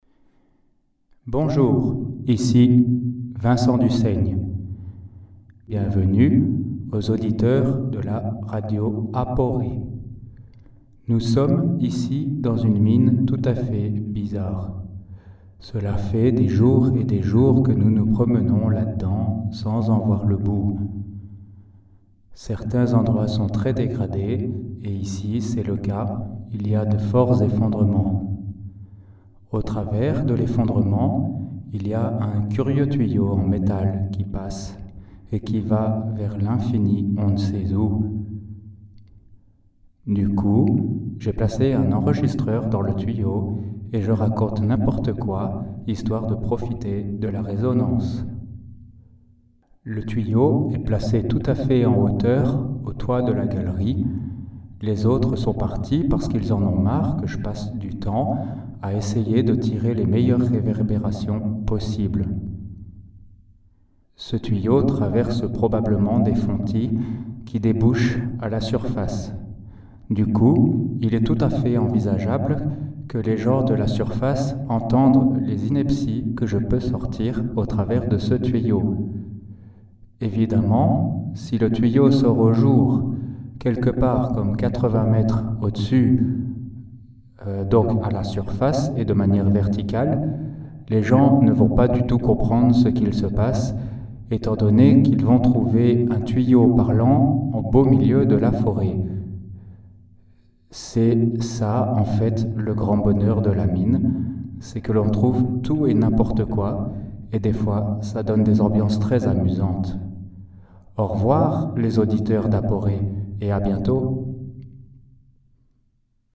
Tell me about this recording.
Into the underground mine, I found a tube going to the surface, passing through 80 meters of hard ground. I made a phone call to the Radio Aporee auditors, with an inane hope to be heard. Of course the tube transforms the voice and above all, the very strong lack of oxygen makes me speak with a curious deformed accent ! Was it really a good idea ? Not sure !